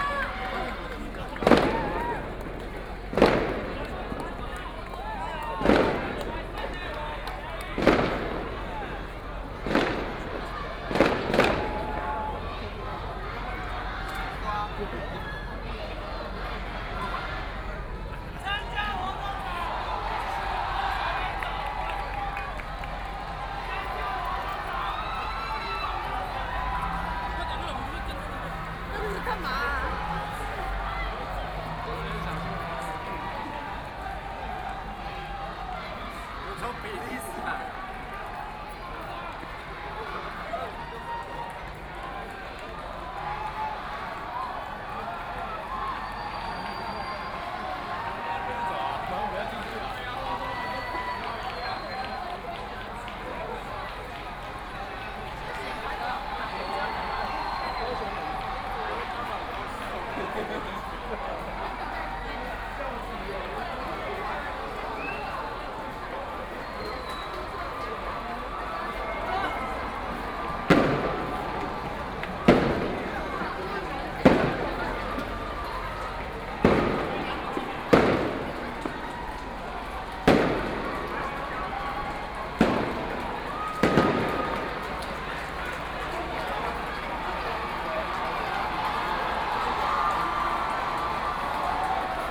Walking through the site in protest, People and students occupied the Legislative Yuan
Binaural recordings